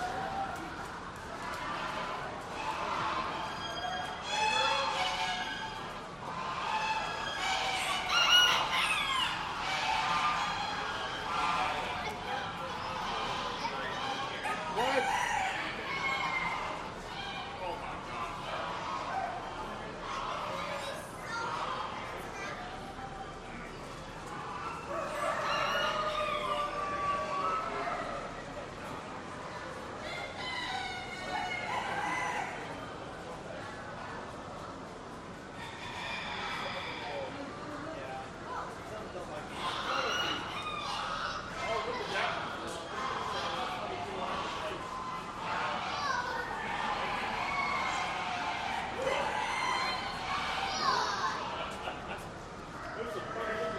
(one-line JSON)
{"title": "Kansas State Fairgrounds, E 20th Ave, Hutchinson, KS, USA - Northwest Corner, Poultry Building", "date": "2017-09-09 16:01:00", "description": "An Old English Game fowl (black breasted) talks. Other poultry are heard in the background. Stereo mics (Audiotalaia-Primo ECM 172), recorded via Olympus LS-10.", "latitude": "38.08", "longitude": "-97.93", "altitude": "470", "timezone": "America/Chicago"}